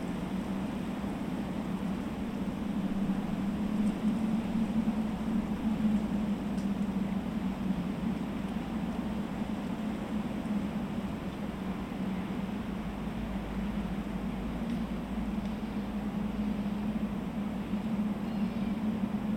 Utena, Lithuania, wind in cell tower
strong wind playing in cell tower